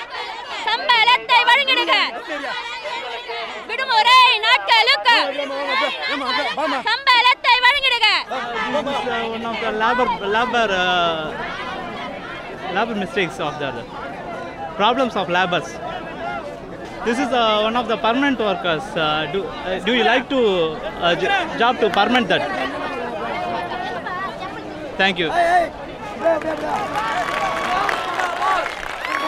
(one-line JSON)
{"title": "MG Road Area, Puducherry, Inde - Mahatma Gandhi Road - Pondicherry", "date": "2008-04-09 20:00:00", "description": "Mahatma Gandhi Road - Pondicherry\nManifestation\nAmbiance", "latitude": "11.93", "longitude": "79.83", "altitude": "9", "timezone": "Asia/Kolkata"}